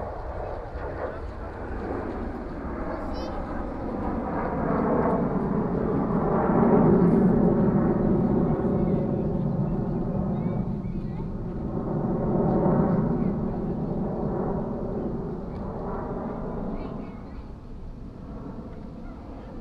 Frznce, Aérodrome Jean Baptiste Salis, aeronef, aeroplane, binaural